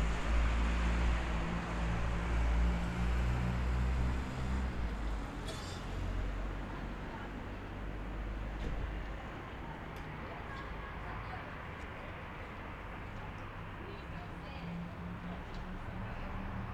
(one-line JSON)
{"title": "Krnjevo, Rijeka, soundscape", "date": "2011-04-08 09:55:00", "description": "Field recording, soundscape\nrec. setup: M/S matrix-AKG mics (in Zeppelin mounted on Manfrotto tripod)>Sound Devices mixer. 88200KHz", "latitude": "45.34", "longitude": "14.40", "altitude": "136", "timezone": "Europe/Zagreb"}